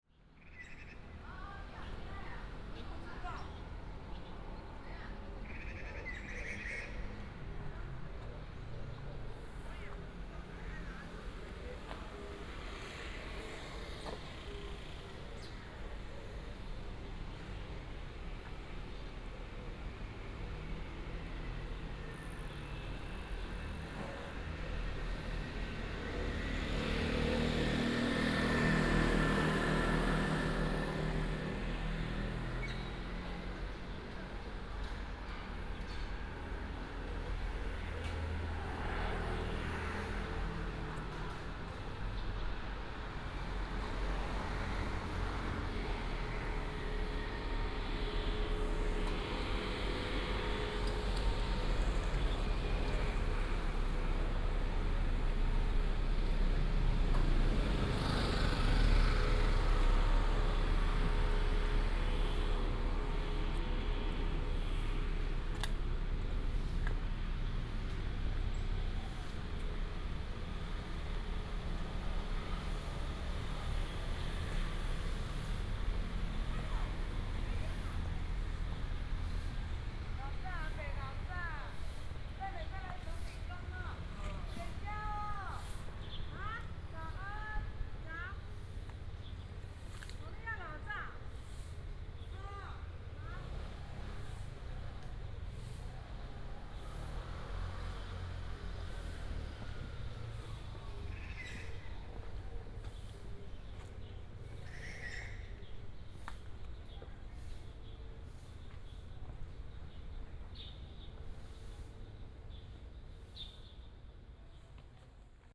{
  "title": "忠孝公園, Hsinchu City - Walking in a small park",
  "date": "2017-09-21 05:57:00",
  "description": "early morning, traffic sound, birds call, Binaural recordings, Sony PCM D100+ Soundman OKM II",
  "latitude": "24.80",
  "longitude": "120.98",
  "altitude": "28",
  "timezone": "Asia/Taipei"
}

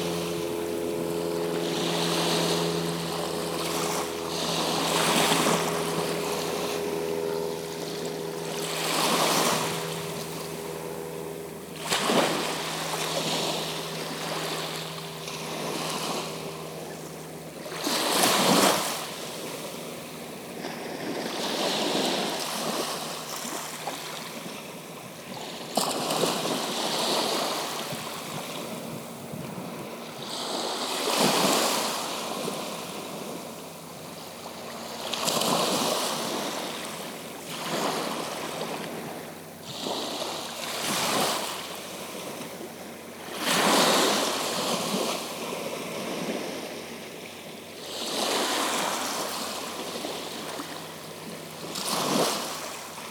{
  "title": "Breskens, Nederlands - The sea",
  "date": "2019-02-17 13:30:00",
  "description": "Sound of the sea on the Breskens beach, and a lot of plovers walking around me.",
  "latitude": "51.40",
  "longitude": "3.57",
  "timezone": "GMT+1"
}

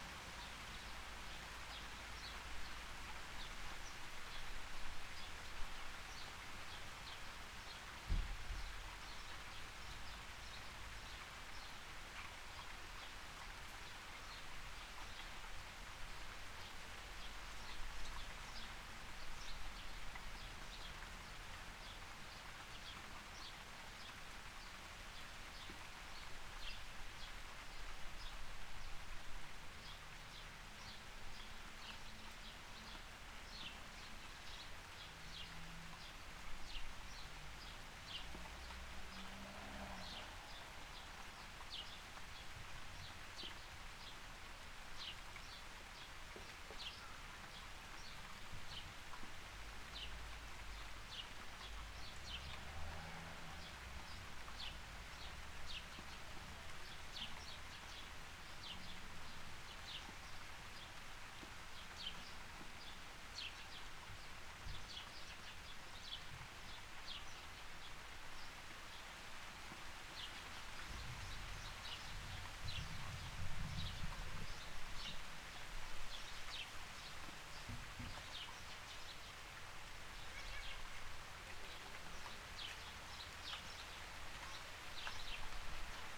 {
  "title": "Zmeyovo, Bulgaria - Rain in the village of Zmeyovo",
  "date": "2021-05-25 18:15:00",
  "description": "A short rain shower in the quiet village of Zmeyovo. Recorded with a Zoom H6 with the X/Z microphone.",
  "latitude": "42.50",
  "longitude": "25.62",
  "altitude": "445",
  "timezone": "Europe/Sofia"
}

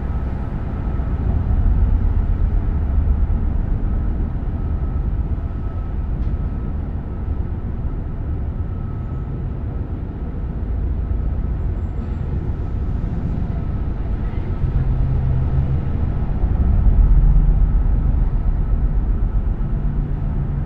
{
  "title": "Ave, New York, NY, USA - Inside a water pipe on Riverside Drive Viaduct",
  "date": "2019-11-10 13:57:00",
  "description": "Sounds of Riverside Drive Viaduct recorded by placing a zoom h6 inside a water pipe.",
  "latitude": "40.82",
  "longitude": "-73.96",
  "altitude": "4",
  "timezone": "America/New_York"
}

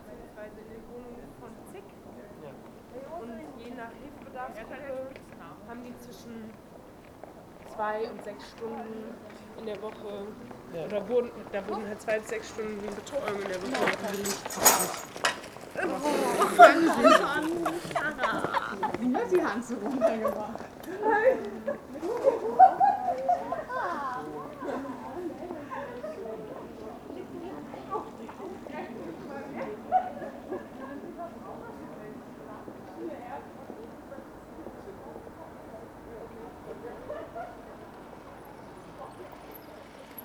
{
  "title": "Berlin: Vermessungspunkt Friedelstraße / Maybachufer - Klangvermessung Kreuzkölln ::: 27.10.2012 ::: 02:52",
  "date": "2012-10-27 02:52:00",
  "latitude": "52.49",
  "longitude": "13.43",
  "altitude": "39",
  "timezone": "Europe/Berlin"
}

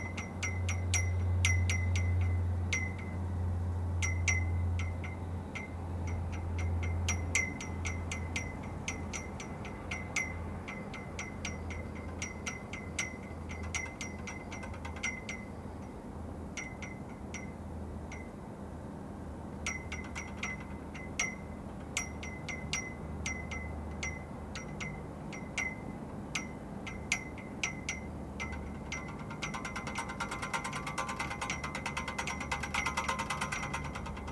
{
  "title": "Spremberg, Germany - Mine atmosphere from viewing point: flagpole ropes in the wind",
  "date": "2012-08-24 16:02:00",
  "latitude": "51.59",
  "longitude": "14.28",
  "altitude": "86",
  "timezone": "Europe/Berlin"
}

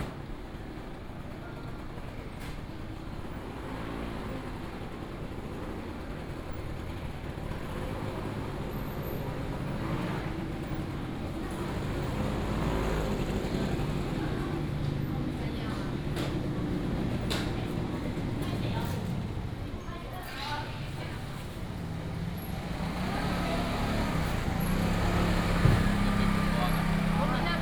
{
  "title": "員林第一公有零售市場, Yuanlin City - Walking in the public market",
  "date": "2017-03-18 10:28:00",
  "description": "Walking in the public market",
  "latitude": "23.96",
  "longitude": "120.57",
  "altitude": "35",
  "timezone": "Asia/Taipei"
}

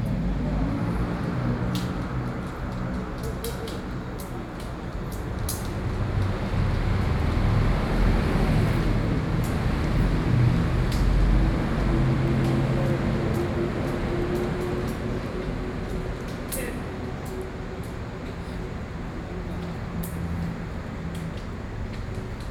{
  "title": "義仁社區公園, Taishan Dist. - In community park",
  "date": "2012-07-08 15:28:00",
  "description": "In community park, A group of people playing chess, traffic sound\nZoom H4n + Rode NT4",
  "latitude": "25.05",
  "longitude": "121.43",
  "altitude": "15",
  "timezone": "Asia/Taipei"
}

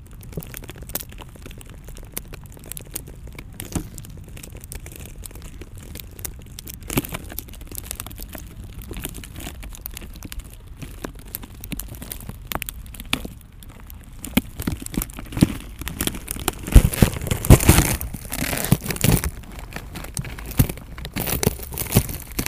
{
  "title": "Around Marienkirche, Step on Trash - Step on Trash",
  "date": "2010-02-02 12:57:00",
  "description": "(Me, Garbage bags, binaurals)",
  "latitude": "52.52",
  "longitude": "13.41",
  "altitude": "53",
  "timezone": "Europe/Berlin"
}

